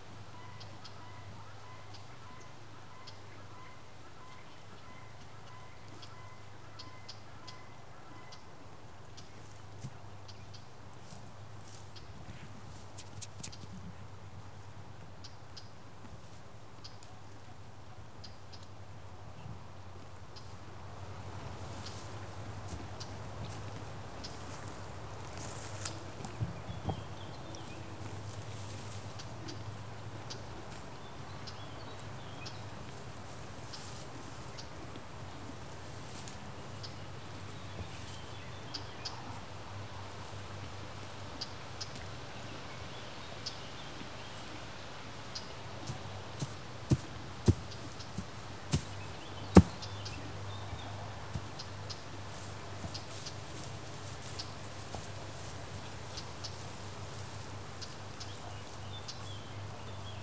Radojewo, Poznan city limits - horses pen
three horses spending thier Sunday in an untended orchard, moving little, chewing tussocks of grass.
Poznan, Poland, 12 July